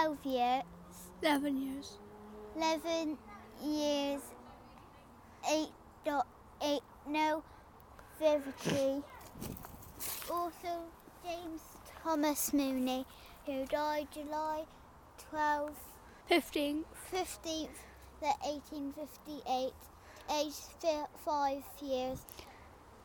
Efford Walk Two: Reading gravestone in Elephants graveyard - Reading gravestone in Elephants graveyard